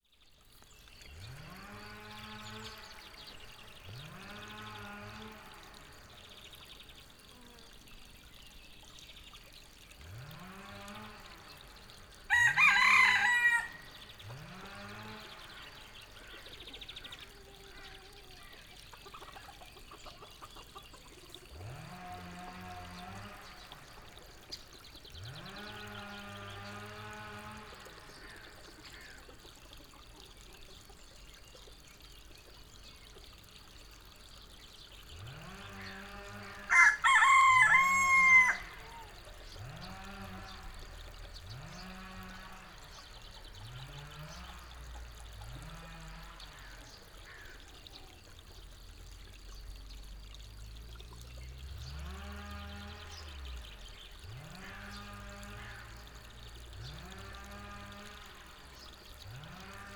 Vinarje, Maribor - chainsaw at work
changed position... now the chainsaw is working, dominating the village's soundscape.